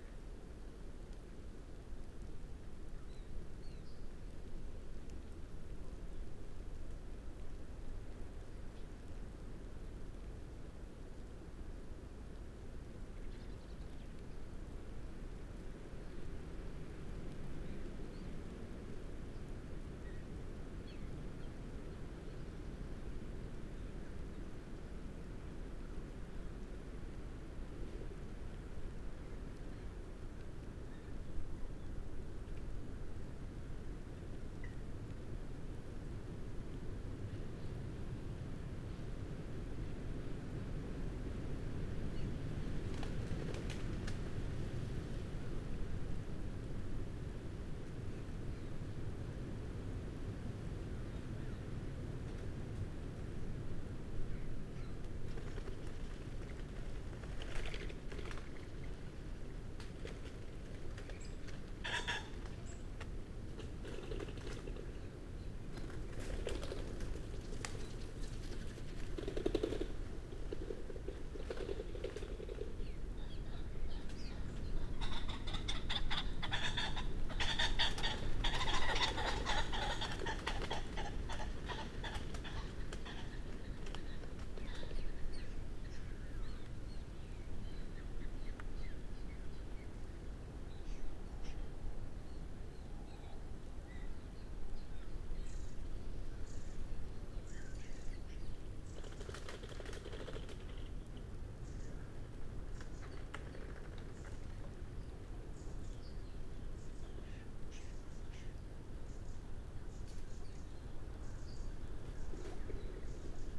Green Ln, Malton, UK - pheasants leaving roost ...
pheasants leaving roost ... dpa 4060s in parabolic to MixPre3 ... bird calls from ... blackbird ... wren ... robin ... red-legged partridge ... crow ... redwing ... birds start leaving 12.25 ... ish ... much wind through trees ...